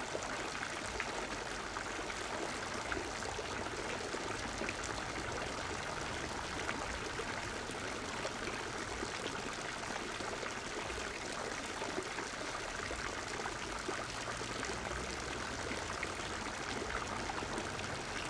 Linz, Hauptplatz, main square, fountain
(Sony PCM D50)
Oberösterreich, Österreich